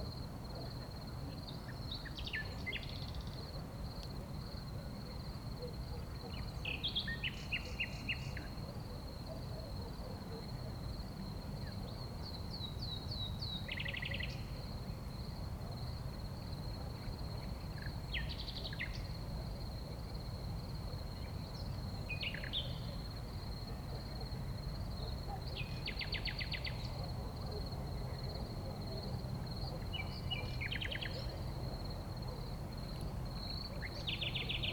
{
  "title": "Strada Viilor, Curteni, Romania - Nightingale, dogs and hissing gas",
  "date": "2018-04-29 22:21:00",
  "description": "Night singing of nightingale, barking dogs and hissing of the gas pipes in Curteni.",
  "latitude": "46.60",
  "longitude": "24.57",
  "altitude": "360",
  "timezone": "Europe/Bucharest"
}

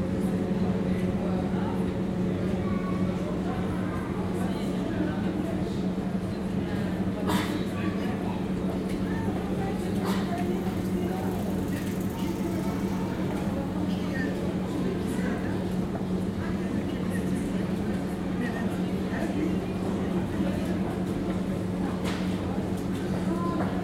{
  "title": "Metz-Centre – Ancienne Ville, Metz, France - Climat corridor",
  "date": "2013-06-06 17:52:00",
  "description": "There is a small courtyard with a climat control in the fond of a corridor and the sound of the street come throught the corridor.",
  "latitude": "49.12",
  "longitude": "6.18",
  "altitude": "186",
  "timezone": "Europe/Paris"
}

